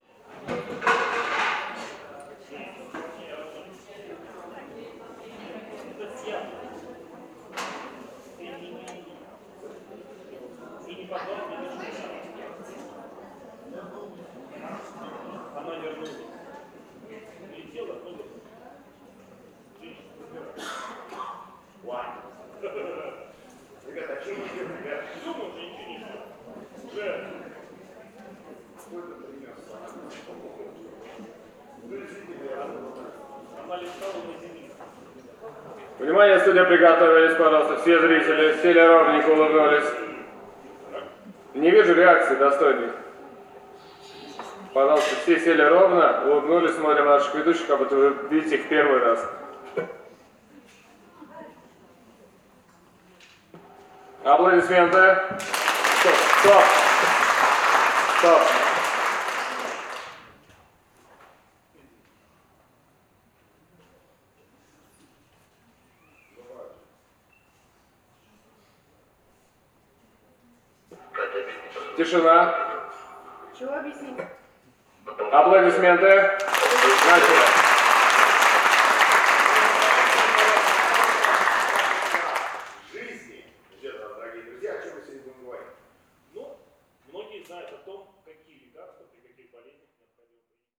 Moscow, Shabolovka - TV studio life
First Russian TV Centre, studio 262
31 August 2010